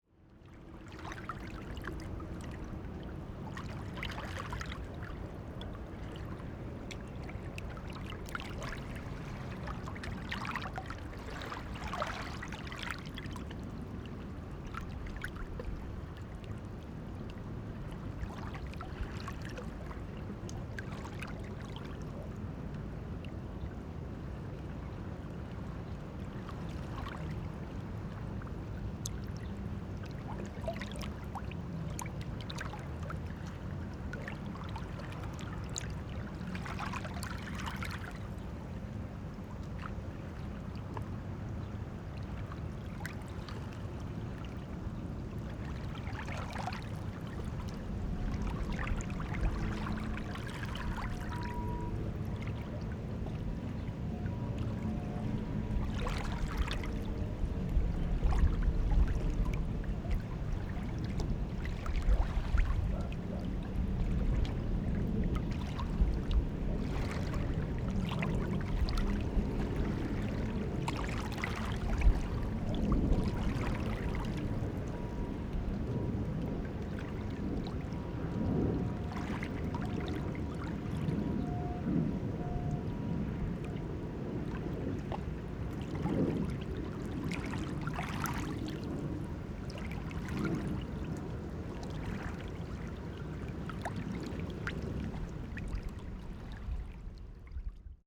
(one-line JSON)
{"title": "淡水河, Bali Dist., New Taipei City - River Sound", "date": "2012-07-08 16:06:00", "description": "River Sound, Traffic Sound\nZoom H4n +Rode NT4", "latitude": "25.12", "longitude": "121.46", "altitude": "5", "timezone": "Asia/Taipei"}